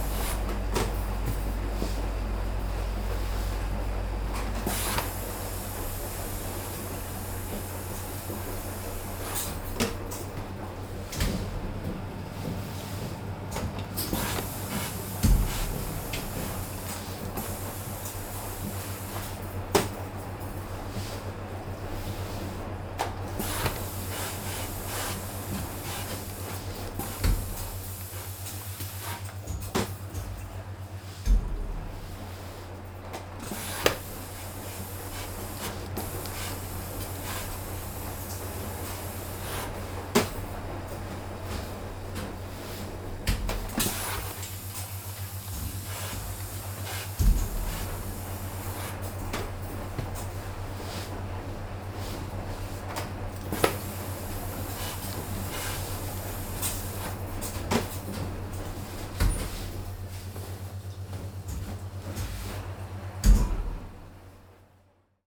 Il suono circolare e idraulico-meccanico della lavanderia a gettone di via Palestro.